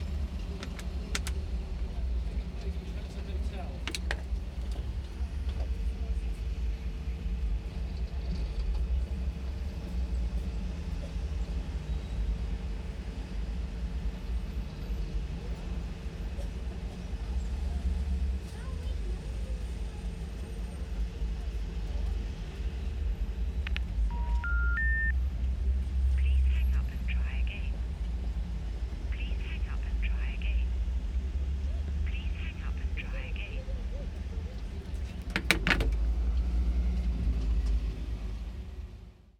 Greater London, Vereinigtes Königreich - London - Inside the call box vis-à-vis St. Pancras after the 'In the Field' symposium
London - Inside the call box vis-à-vis St. Pancras after the 'In the Field' symposium.
'In the Field' - a symposium 'exploring the art and craft of field recording' - ended only a few minutes before. Presenters included Ximena Alarcón, Angus Carlyle, Des Coulam, Peter Cusack, Simon Elliott, Felicity Ford, Zoe Irvine, Christina Kubisch, Udo Noll – Radio Aporee, Cheryl Tipp, David Vélez, Chris Watson, and Mark Peter Wright.
[I used a Hi-MD-recorder Sony MZ-NH900 with external microphone Beyerdynamic MCE 82].
UK, 16 February 2013